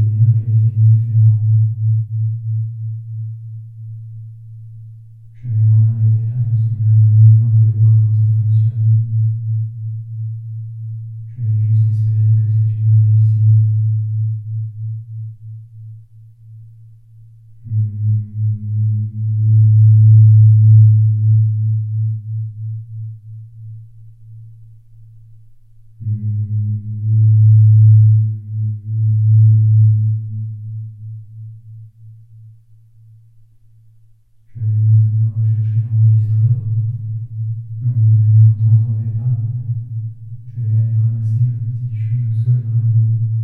Vielsalm, Belgique - Reverb in a mine tunnel
/!\ Be careful, extra loud sound /!\ Into an underground mine, I discovered a round tunnel. This one has an evocative reverb. When talking into the tunnel, it produces loud reverb on the walls and the ceiling. I'm talking and saying uninteresting sentences, it's only in aim to produce the curious sound. It's very near to be impossible to understand what I say, the sound is distorted, the low-pitched frequencies are reinforced.
6 October 2018, 08:30